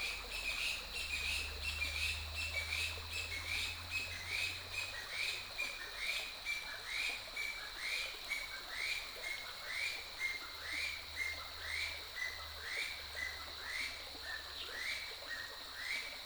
中路坑溪, 桃米里 Puli Township - Bird calls
Bird calls, The sound of water streams
Nantou County, Taiwan, June 2015